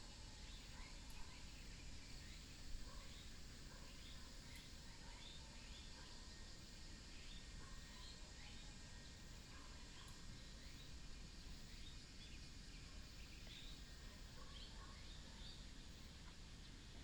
Small countryside, Birds sound, Cicada cry, traffic sound